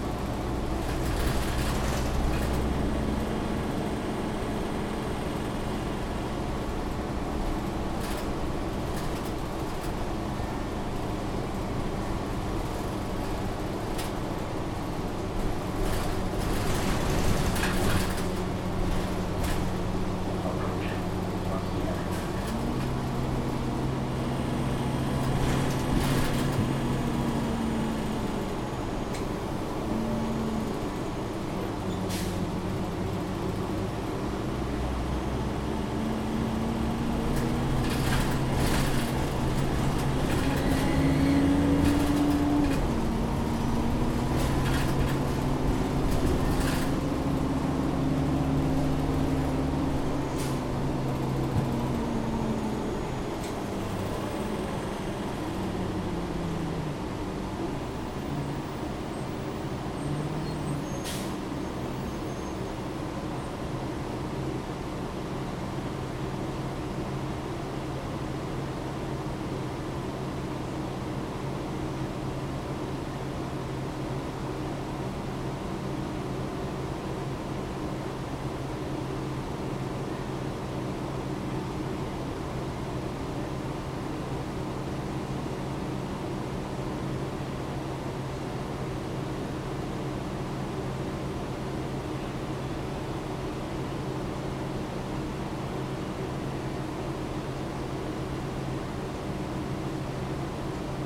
{
  "title": "Central LA, Los Angeles, Kalifornien, USA - bus trip in LA",
  "date": "2014-01-20 12:05:00",
  "description": "bus trip from west hollywood down north la cienega blvd, a/c in bus",
  "latitude": "34.08",
  "longitude": "-118.38",
  "timezone": "America/Los_Angeles"
}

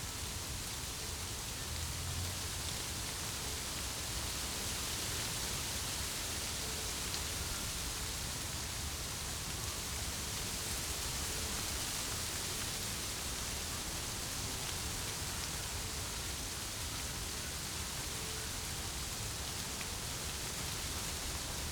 {
  "title": "Tempelhofer Park, Berlin - wind in a hazelnut bush",
  "date": "2014-10-12 10:20:00",
  "description": "the sound of wind in leaves becomes harsh in autumn\n(Sony PCM D50, DPA4060)",
  "latitude": "52.47",
  "longitude": "13.42",
  "altitude": "48",
  "timezone": "Europe/Berlin"
}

